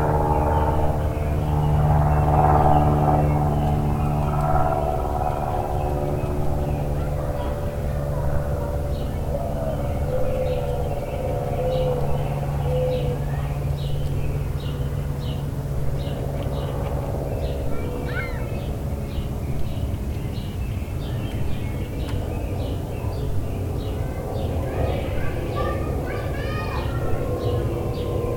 General suburban sounds in the late afternoon of a mid-Spring day. Calls of mourning doves, sparrows, and robins; sounds of passing air and ground vehicles, emergency sirens, people talking, a few brief dings from a wind chime, and the music of an ice cream truck. Zoom H4n using built-in mics and placed on an upside-down flower pot.